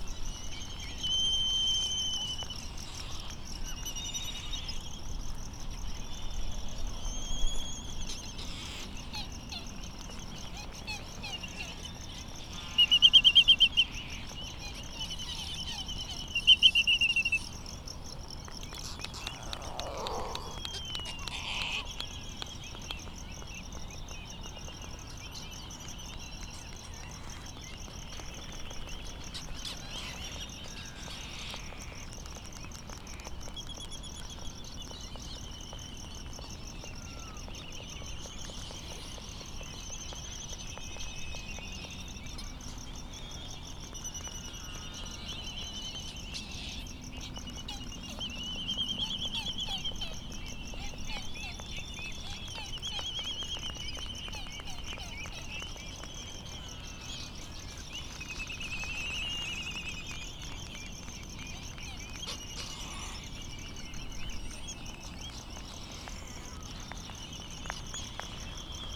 United States Minor Outlying Islands - Laysan albatross and Bonin petrel soundscape ...
Laysan albatross and Bonin petrel soundscape ... Sand Island ... Midway Atoll ... laysan calls and bill clapperings ... bonin calls and flight calls ... crickets ticking ... open lavalier mics ... warm ... blustery ...